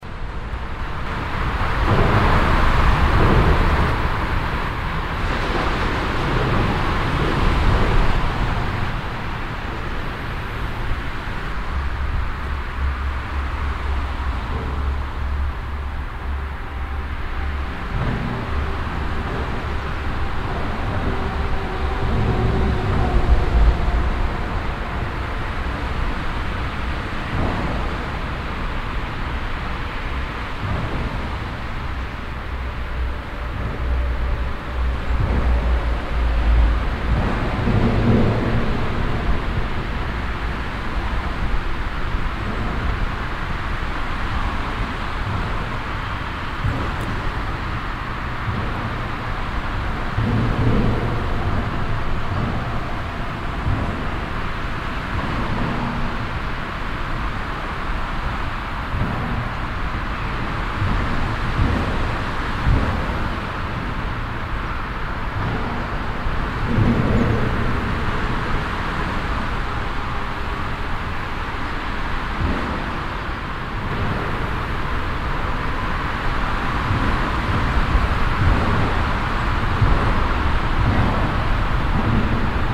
erkrath, unter autobahnbrücke, a3
soundmap: erkrath/ nrw
ambiente unter deutschlands grösster autobahnbrücke, mittags - märz 2007
project: social ambiences/ - in & outdoor nearfield recordings